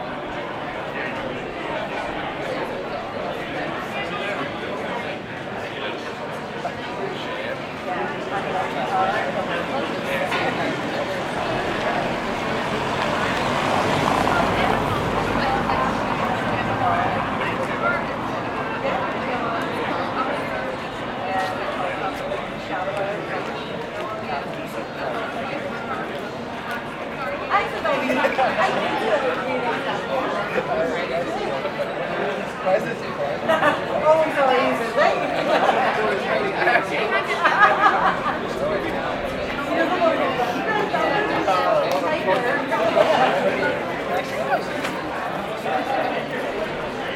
Hill St, Belfast, UK - Commercial Court
Recording of outdoor crowd chatter, multi pub ambiences, glassware, cars passing on cobblestone, laughter, radio music playing on speakers, pedestrians walking, a child talking.